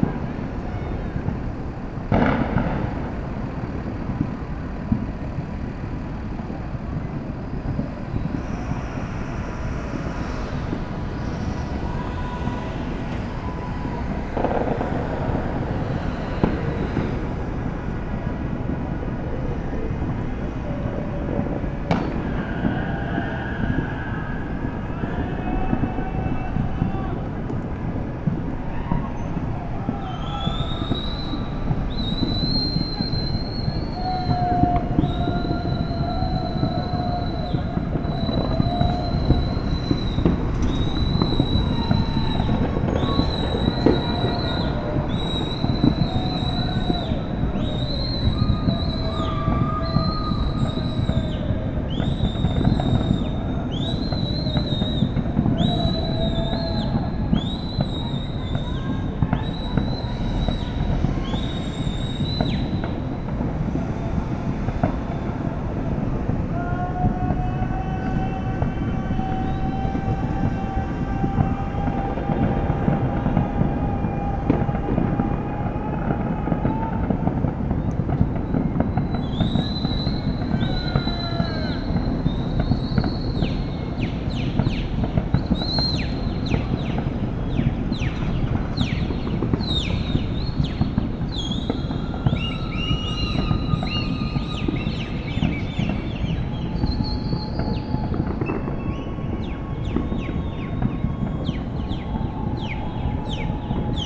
Some fireworks and screaming for New Year 2020.
Recording from the 14th floor in the center of Belo Horizonte(Brazil), JK building.
Recorded by a AB Setup B&K4006
Sound Devices 833
Sound Ref: AB BR-191231T01
GPS: -19.923656, -43.945767
Recorded at midnight on 31st of December 2019
Região Sudeste, Brasil